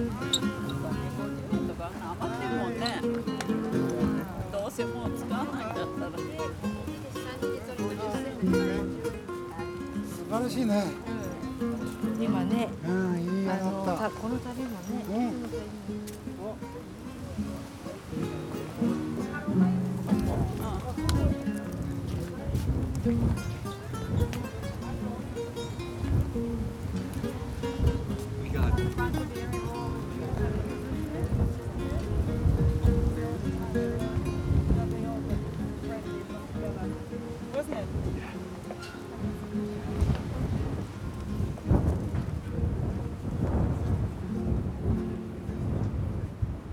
Lisbon, Largo Portas do Sol, an observation deck - man playing guitar
a man playing guitar on an observation deck among tourists. another man blatantly trying to sell cheep bracelets and lavalieres. gusts of wind.
Lisbon, Portugal, September 2013